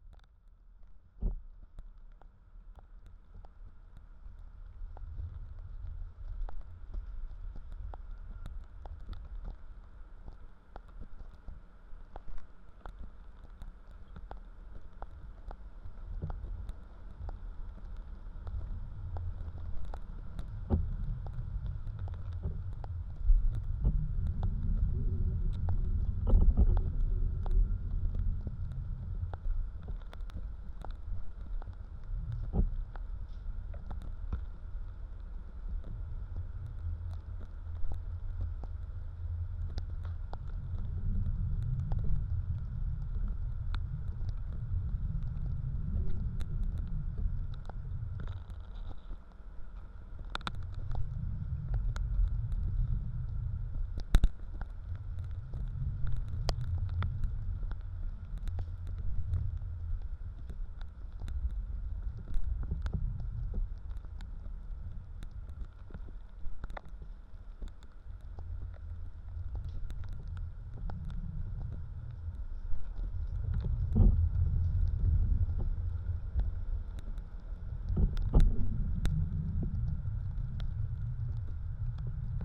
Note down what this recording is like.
contact mics on a sheet of tiny ice left after flood on a frass